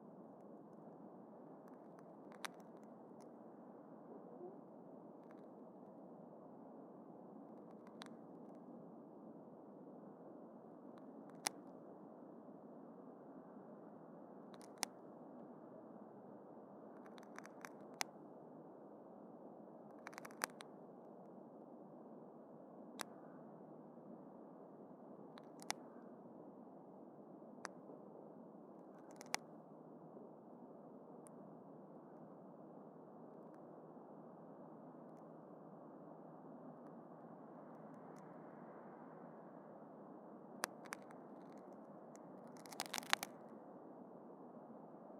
Utena, Lithuania - between birch and pine
I found some symbiosis in the trees: birch and pine almost merged together. swaying in the wind their "conjugation" makes this subtle cracking micro sound
19 February 2012